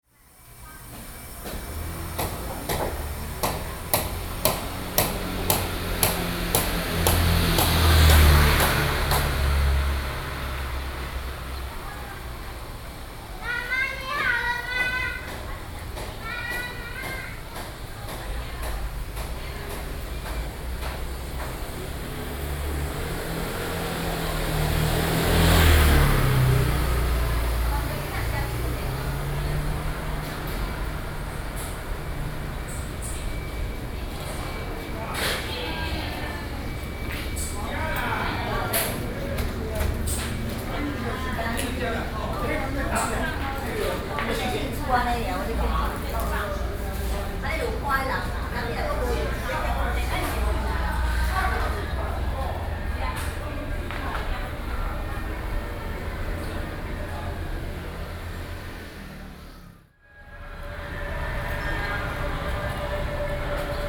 Zhongzheng District, Keelung - soundwalk
Walking through the small streets, Traditional temple festivals, Sony PCM D50 + Soundman OKM II